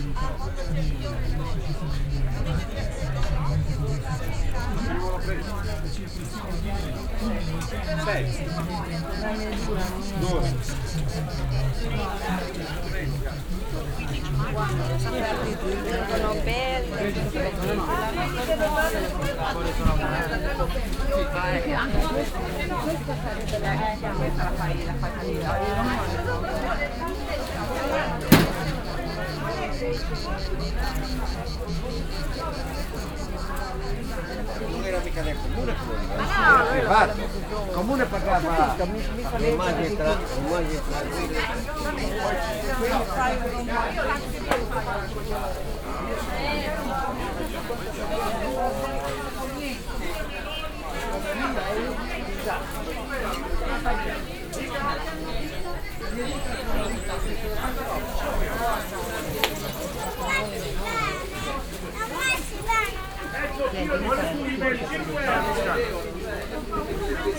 alassio, via giovanni batista, weekly market
fruits, meats and vegetable sellers on the weekly market
soundmap international: social ambiences/ listen to the people in & outdoor topographic field recordings
25 July 2009, 18:20